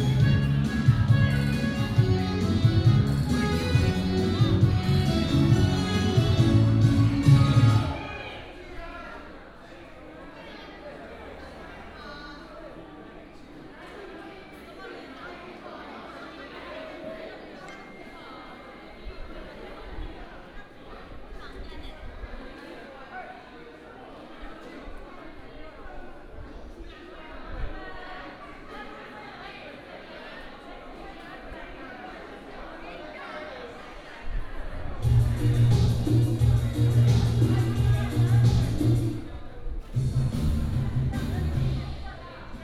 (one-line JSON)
{"title": "都歷, Chenggong Township - Aboriginal small village", "date": "2014-09-06 16:15:00", "description": "Aboriginal small village, Residents Activity Center, The weather is very hot", "latitude": "23.03", "longitude": "121.33", "altitude": "29", "timezone": "Asia/Taipei"}